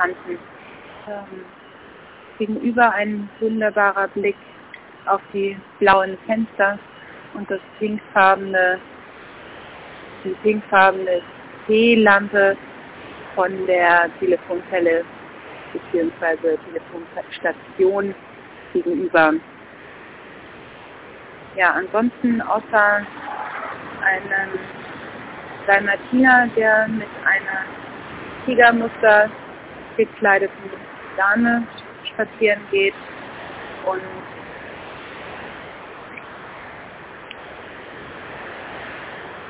{"title": "Münzfernsprecher Kottbusser Damm 63 - Blaue Fenster gegenüber 18.09.2007 20:09:20", "latitude": "52.49", "longitude": "13.43", "altitude": "41", "timezone": "GMT+1"}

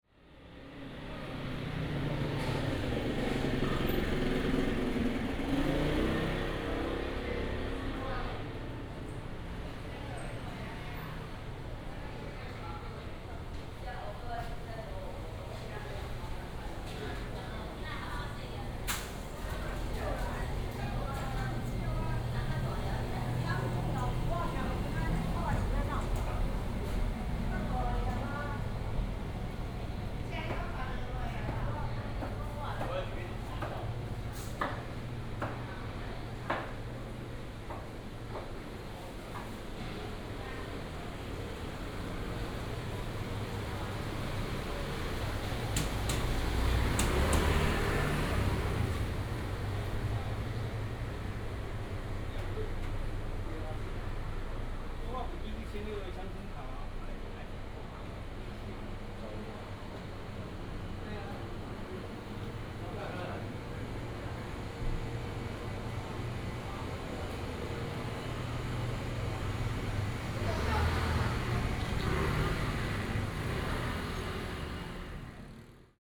{"title": "彰化南門市場, Changhua City - Walking through the market", "date": "2017-03-18 15:34:00", "description": "Walking through the market, Traffic sound", "latitude": "24.08", "longitude": "120.54", "altitude": "24", "timezone": "Asia/Taipei"}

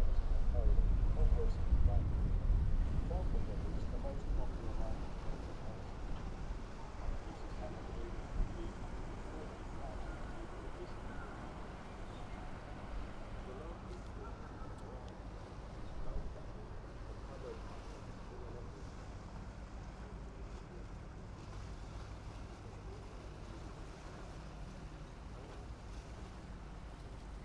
{"title": "Helsinki, Finland - Port of Helsinki", "date": "2011-08-10 18:11:00", "latitude": "60.17", "longitude": "24.96", "altitude": "4", "timezone": "Europe/Helsinki"}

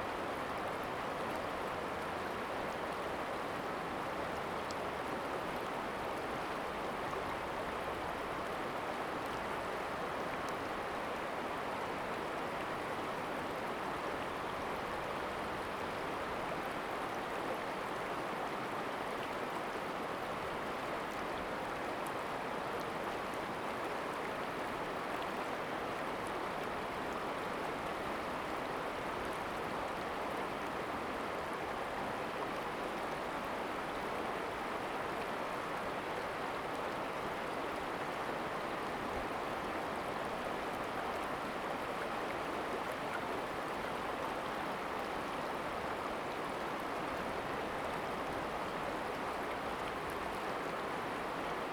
{"title": "金峰鄉拉灣橋, Taitung County - Stream sound", "date": "2018-04-03 15:40:00", "description": "river, Stream sound\nZoom H2n MS+XY", "latitude": "22.59", "longitude": "120.96", "altitude": "66", "timezone": "Asia/Taipei"}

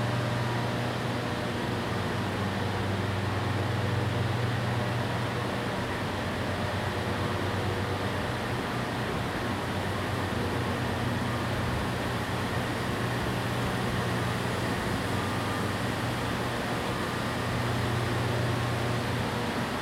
{"title": "Lockhart Dr, St. Catharines, ON, Canada - The Twelve | Sound of Electricity DeCew 1", "date": "2014-03-21 12:15:00", "description": "This is the sound of electricity being made at DeCew Generating Station 1. The sound was recorded on an H2n mounted on a monopod as I walked from one end of the power house to the other, then opened a door to outside. The file is unaltered and in real time as I walk by the beautiful old machinery on a thick concrete floor built from on site river rock. DeCew 1 is the oldest continually running hydroelectric generating station in Canada, built in 1898 and one of the first uses in the world of Nikola Tesla’s polyphase current. The water source is a man-made reservoir fed by the Welland Canal at the top of the Niagara Escarpment, Lake Gibson, and the discharge is The Twelve Mile Creek that opens to Lake Ontario. This recording was made thanks to the Ontario Power Generation employee who preferred to be anonymous and was used in the audio program for the installation, Streaming Twelve, exhibited at Rodman Hall Art Centre.", "latitude": "43.12", "longitude": "-79.26", "altitude": "107", "timezone": "America/Toronto"}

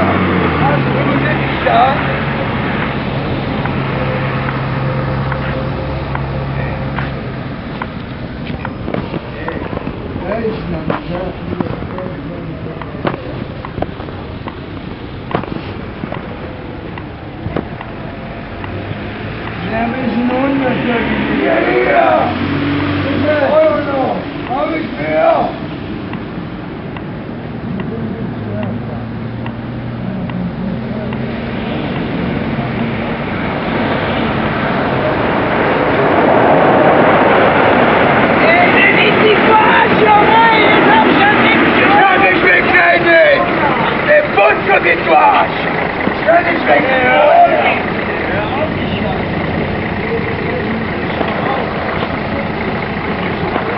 {"title": "Köln-Porz Wahn Christmas 12/24/2008", "latitude": "50.86", "longitude": "7.08", "altitude": "53", "timezone": "GMT+1"}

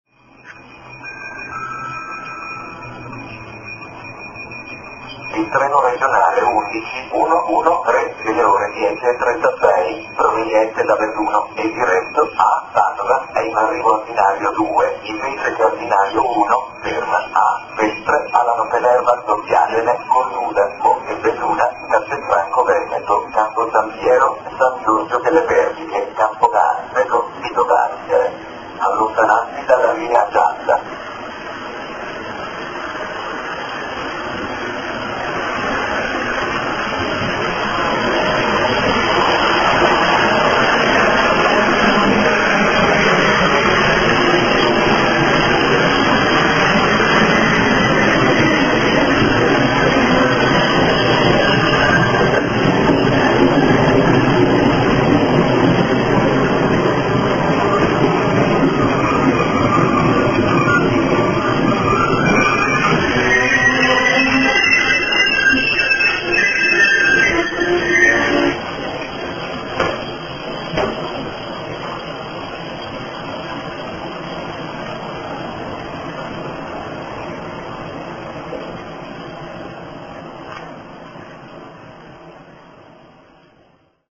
{"date": "2010-05-31 23:00:00", "description": "Santa Giustina (Belluno) Italy\nStazione ferroviaria e annuncio con percorso", "latitude": "46.08", "longitude": "12.04", "altitude": "296", "timezone": "Europe/Rome"}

